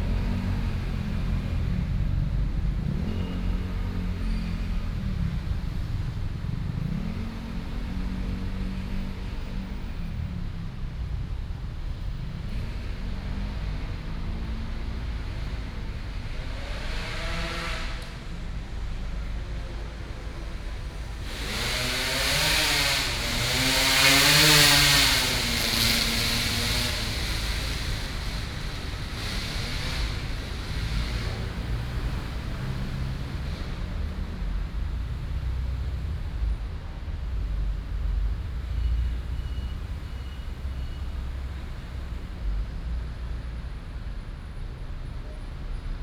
光信公園, Yanji St., Da'an Dist. - in the Park
in the Park, Very hot weather, Traffic noise
22 June 2015, 15:01